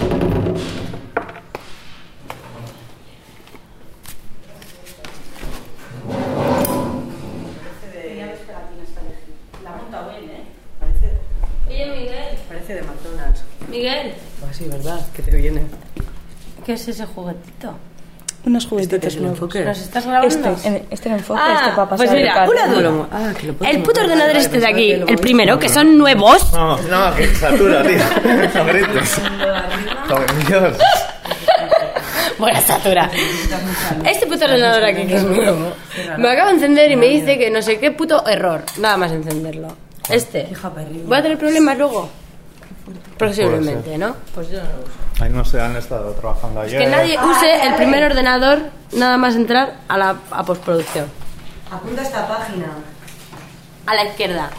leioa, EHU basque country - audio ehu jesso ale irene
people talking audiovisual section fine arts faculty basque country university